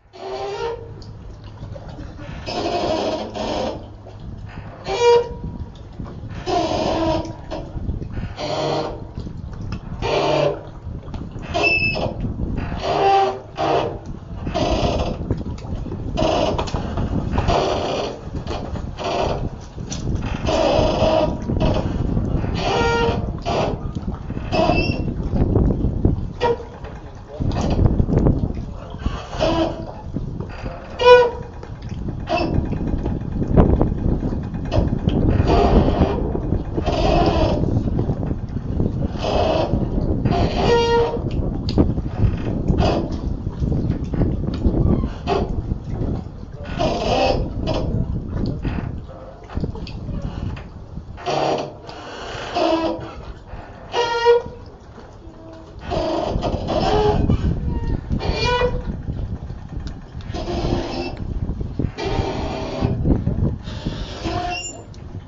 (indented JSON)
{
  "title": "Gothenburg, ferry terminal creeking speech",
  "date": "2010-08-05 12:07:00",
  "description": "the ferry terminal ponton is waiting for the ship to come. As time in water is waves, and waves on matter are sound, this is the time to wait till the ferry arrives.",
  "latitude": "57.70",
  "longitude": "11.95",
  "timezone": "Europe/Copenhagen"
}